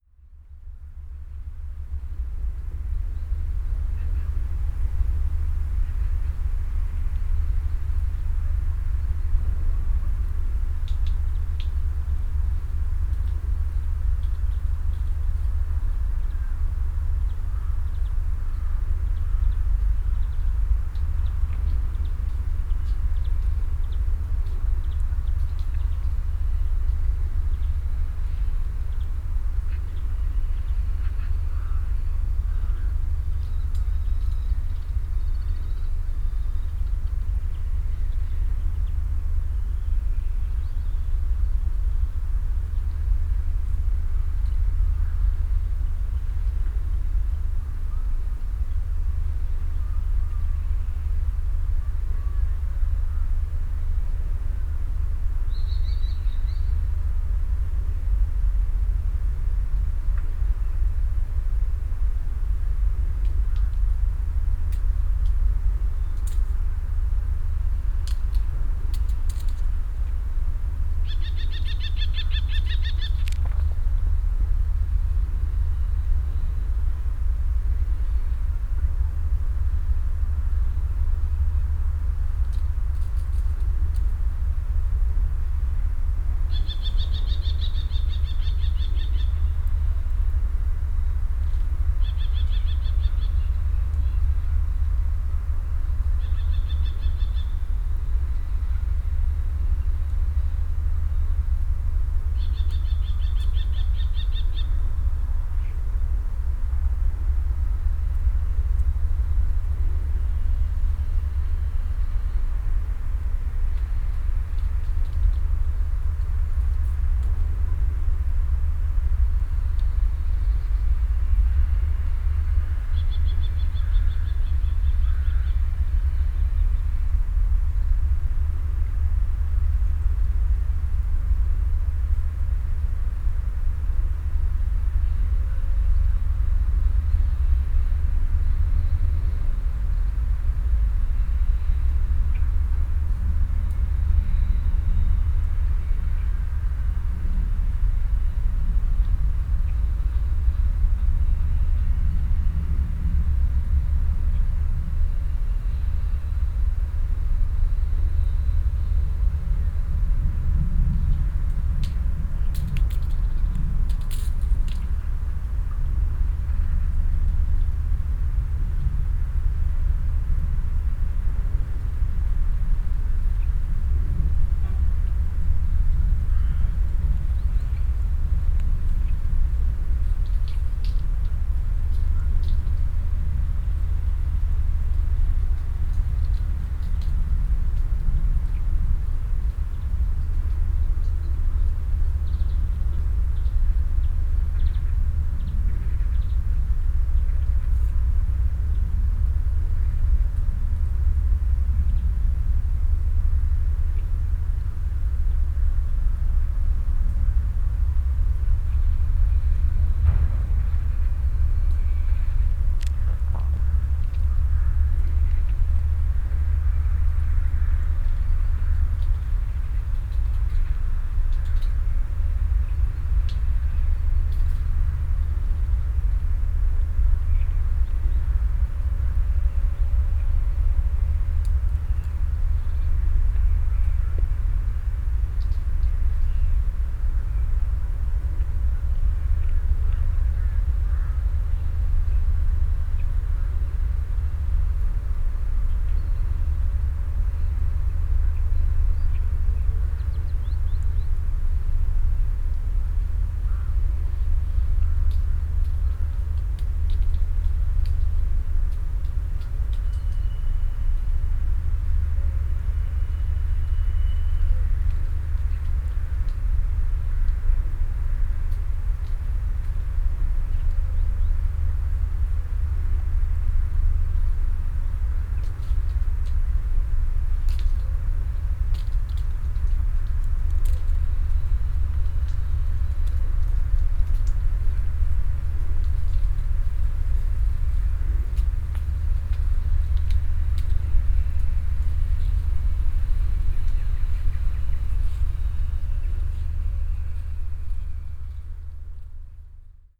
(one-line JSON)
{"title": "Morasko, Deszczowa Rd. - falling leaves", "date": "2014-11-07 13:26:00", "description": "(binaural) recorded some distance away from Poznan city limits. Picking up all kind of sounds form around the area in this rather isolated place. deep tremble of construction machinery. birds living in the nearby fields and bushes. wilted leaves falling down occasionally from the tree in front.", "latitude": "52.47", "longitude": "16.91", "altitude": "95", "timezone": "Europe/Warsaw"}